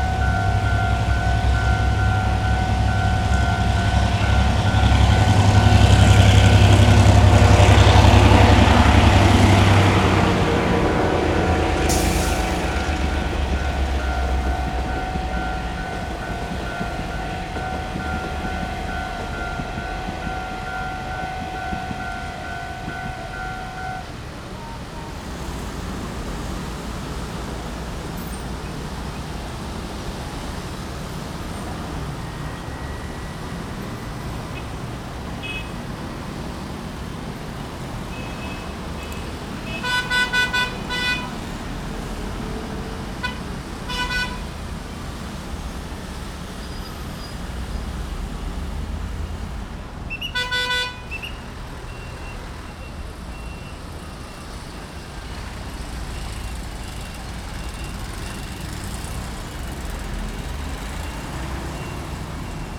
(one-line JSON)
{"title": "Zuoying - Level crossing", "date": "2012-03-03 15:29:00", "description": "Warning tone, Train traveling through, Traffic Noise, Rode NT4+Zoom H4n", "latitude": "22.68", "longitude": "120.30", "altitude": "7", "timezone": "Asia/Taipei"}